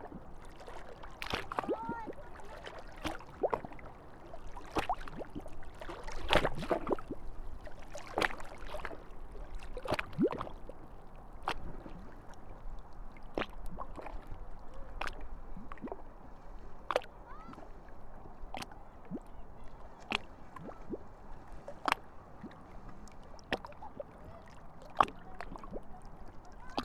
Presteskjær, 1394 Nesbru, Norway, soundscape
13 August, ~13:00